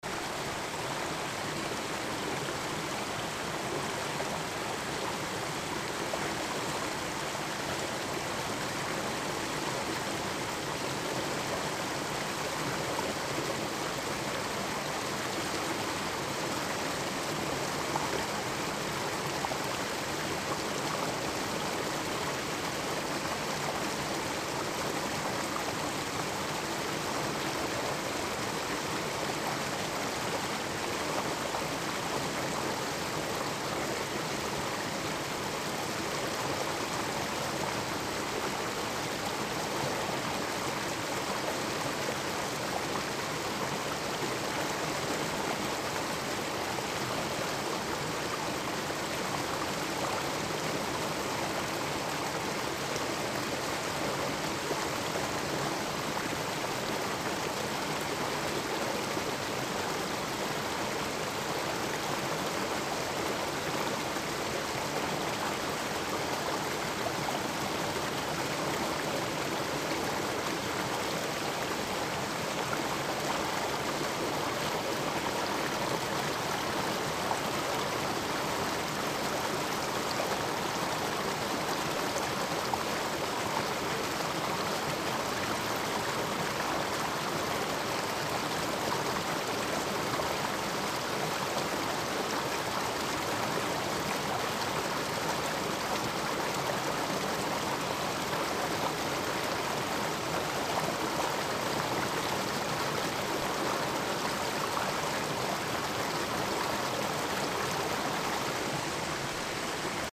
Leona Heights park creek, upstream ---- Oakland - Leona Heights park creek, upstream ---- Oakland
water roaring and rushing through a narrow canyon of Leona ... this is my favorite East Bay creek and hike alongside of it... It doesn't look like on a picture from above, but Leona canyon is actually very beautiful and it holds some of the last first grove redwoods in East Bay
Alameda County, California, United States of America, 7 March 2010, 03:10